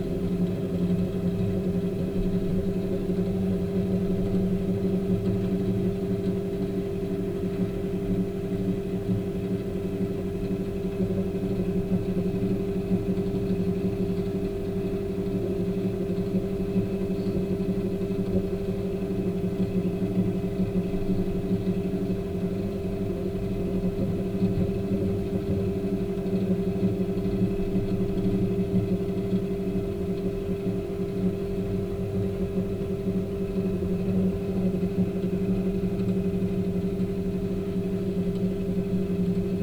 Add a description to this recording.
The sound of kybernetic op art objects of the private collection of Lutz Dresen. Here no.02 an rotating object by zero artist Uecker, soundmap nrw - topographic field recordings, social ambiences and art places